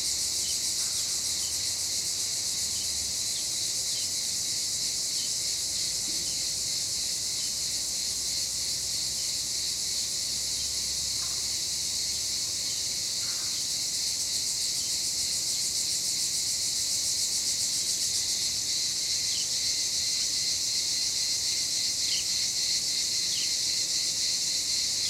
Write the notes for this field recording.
Cicadas, crow, other bird and rare traffic. Recorded in Mirada Del Mar Hotel with Zoom H2n 2ch surround mode